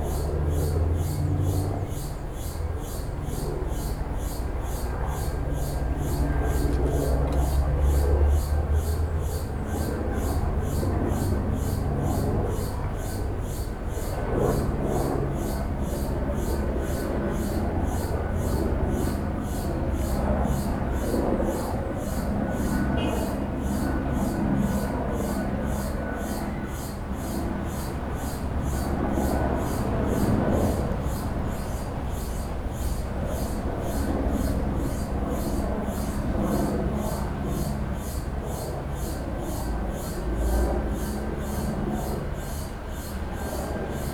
Pina, Recife - PE, República Federativa do Brasil - Pina Mangrove 01
H4n. Project Mangroves Sound